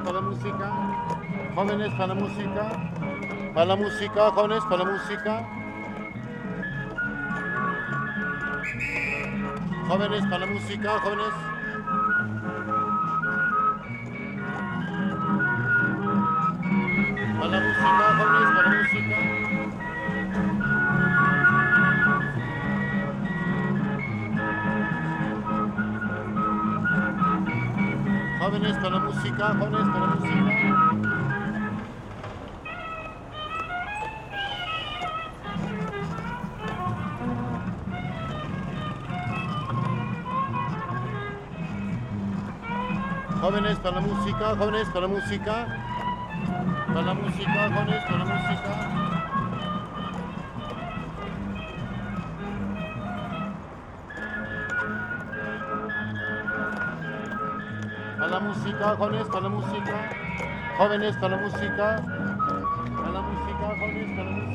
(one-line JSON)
{"title": "de Mayo, Centro histórico de Puebla, Puebla, Pue., Mexique - Puebla - 5 de Mayo", "date": "2021-11-08 09:40:00", "description": "Puebla - Mexique\nÀ l'entrée de 5 de Mayo il interpèle les passants avec un faux orgue de barbarie.", "latitude": "19.04", "longitude": "-98.20", "altitude": "2157", "timezone": "America/Mexico_City"}